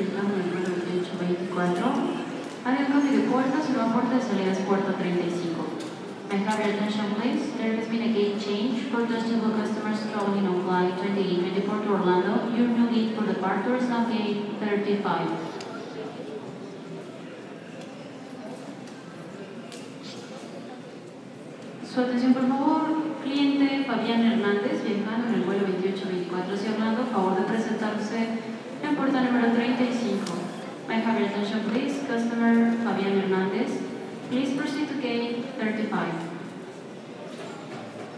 C. Sonora, Sint-Niklaas, Venustiano Carranza, Ciudad de México, CDMX, Mexique - Benito Juarez International Airport - Mexico
Benito Juarez International Airport - Mexico
Ambiance hall d'embarcation